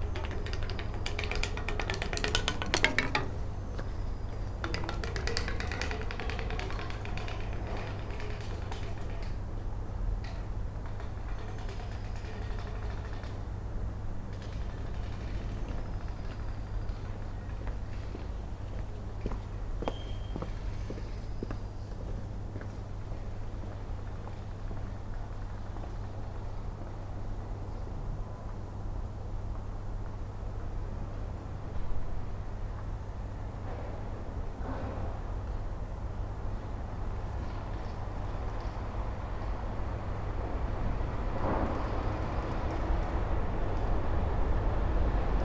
I wanted to find a way of sounding the architecture of Hart Street, and so I played the old iron railings with a stick. I like when the seagulls join in.